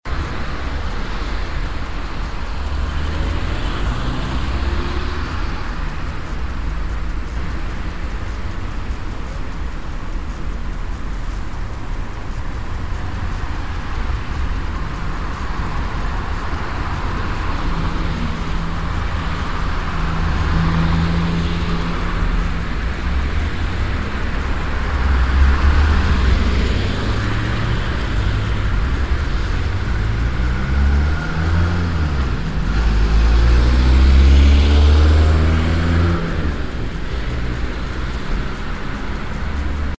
cologne, stadtgarten, soundmap Eingang Tor - cologne, stadtgarten, soundmap - eingang tor
stereofeldaufnahmen im september 07 mittags
project: klang raum garten/ sound in public spaces - in & outdoor nearfield recordings
stadtgarten park, eingang tor, 22 April 2008, 13:00